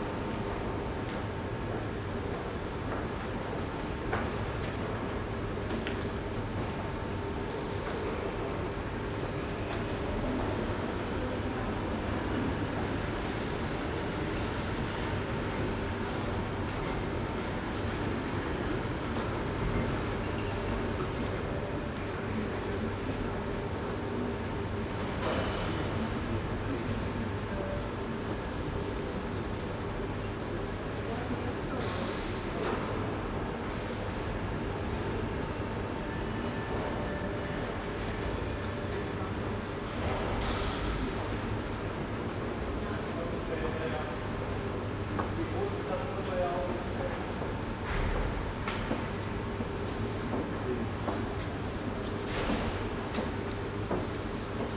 {"title": "Stadt-Mitte, Düsseldorf, Deutschland - Düsseldorf, Stilwerk, second floor", "date": "2012-11-06 17:30:00", "description": "Inside the Stilwerk building on the second floor of the gallery. The sound of people talking and moving and the bell and the motor of the elevators in the open modern architecture.\nThis recording is part of the exhibition project - sonic states\nsoundmap nrw - topographic field recordings, social ambiences and art places", "latitude": "51.22", "longitude": "6.78", "altitude": "47", "timezone": "Europe/Berlin"}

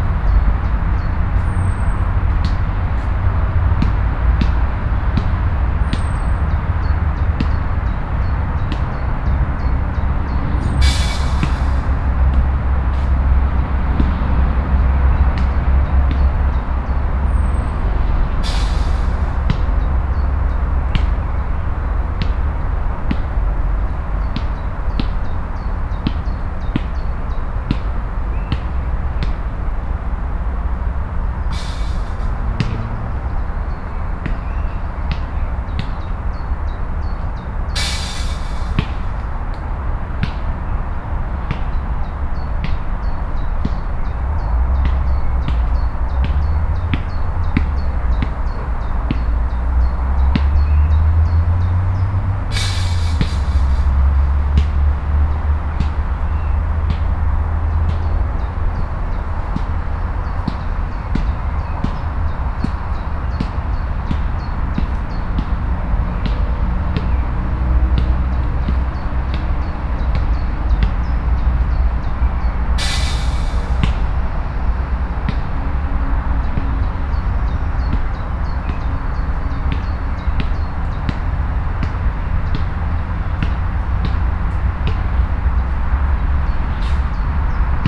{"date": "2010-05-10 14:15:00", "description": "Diegem, near the Woluwelaan, a young man playing basket-ball", "latitude": "50.89", "longitude": "4.44", "timezone": "Europe/Brussels"}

